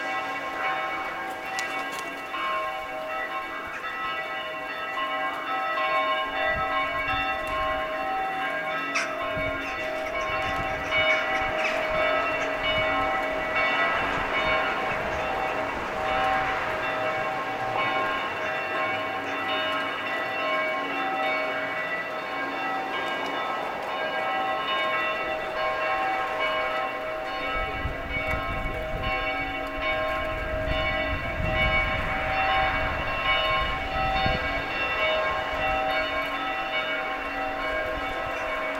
Mistrzejowice Bus Depot, Kraków, Poland - (881) Bells
Recording of bells from near church caught accidentally while passing through bus depot.
Recorded with Olympus LS-P4
województwo małopolskie, Polska